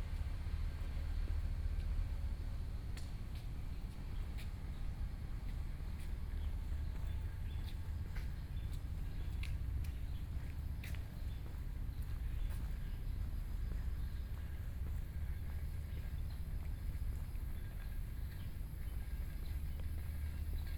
鹽埕區新化里, Kaoshiung City - Walk
Birds singing, Morning pier, Sound distant fishing, People walking in the morning
Kaohsiung City, Taiwan